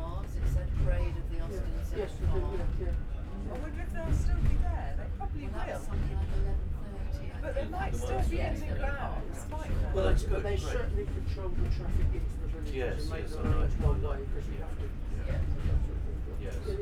South Devon Railway, Staverton, Totnes, UK - Steam Train Ride on the South Devon Railway.
Steam train journey between Buckfastleigh and Staverton. The sharp snapping sound is the guard clipping tickets. There is also the sound of the creaking carriage and an occasional hoot of the engines whistle. Recorded on a Zoom H5